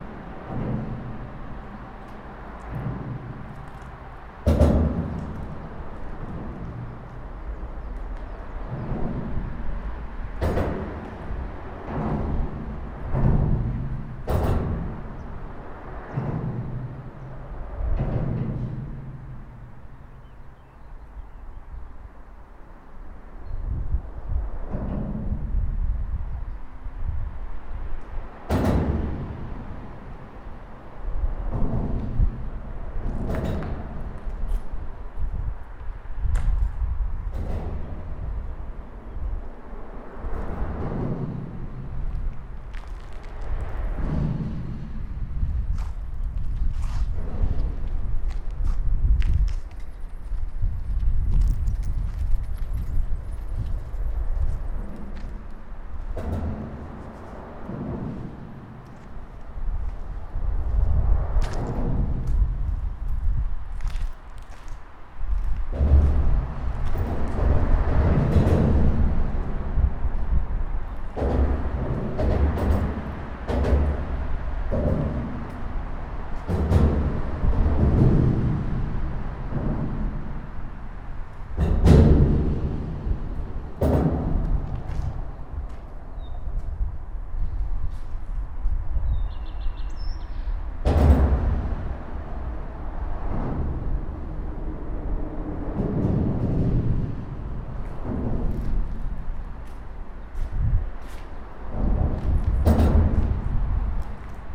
Teatralna, Gorzów Wielkopolski, Polska - Under the bridge.
Noises of passing cars under the city bypass bridge.
23 April 2020, 14:04